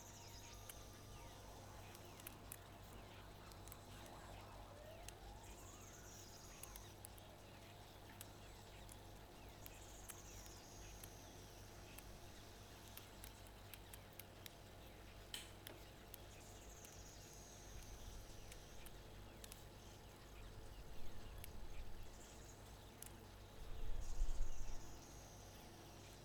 Poznan, Mateckiego street, kitchen - kitchen series: pot on a stove
a few drops of water got under a pot, exploding and sizzling as temperature was rising. later you get to hear the most unusual sounds of the heated meal.
Poznań, Poland, August 2013